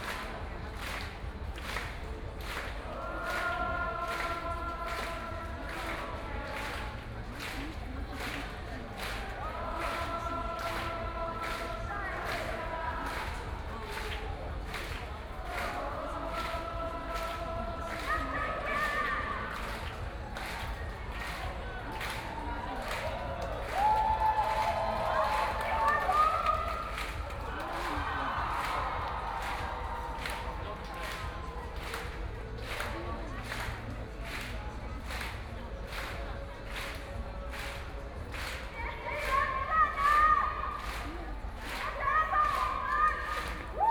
Zhenjiang St., Taipei City - occupied the Legislative Yuan
Student activism, Sit-in protest, People and students occupied the Legislative Yuan